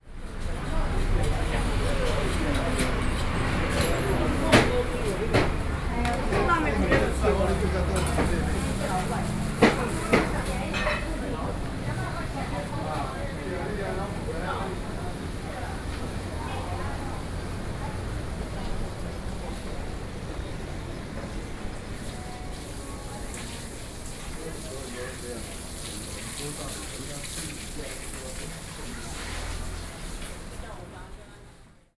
竹圍市場, Tamsui Dist., New Taipei City - Traditional markets
New Taipei City, Taiwan